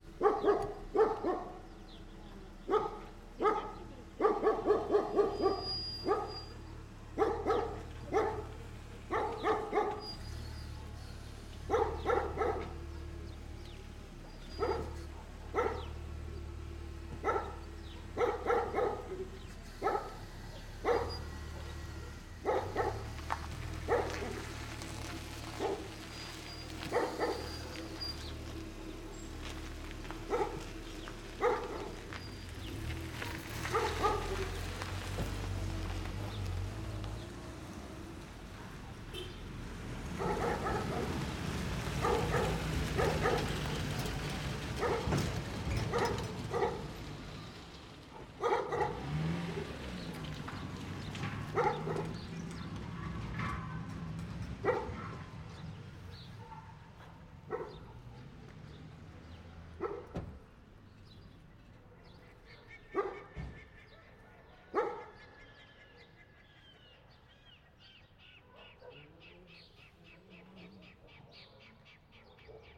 This is a recording of a street in Corral, by morning. I used Sennheiser MS microphones (MKH8050 MKH30) and a Sound Devices 633.